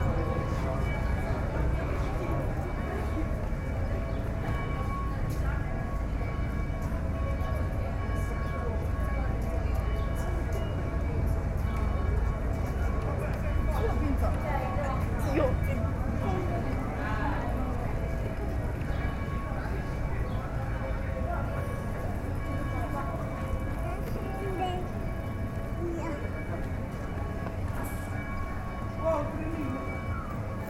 Ascoli Piceno AP, Italia - wld - via del Trivio
Edirol R-09HR